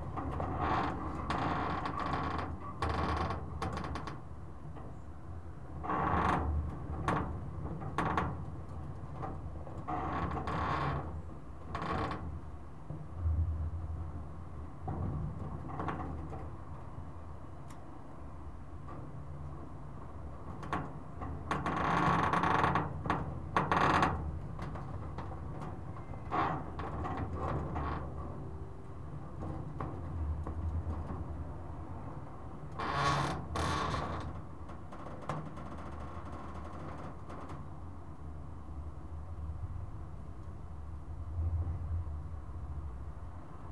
Yves Brunaud, Toulouse, France - metalic vibration 06
metal palisade moving by the action of the wind
Captation ZOOM H4n
Occitanie, France métropolitaine, France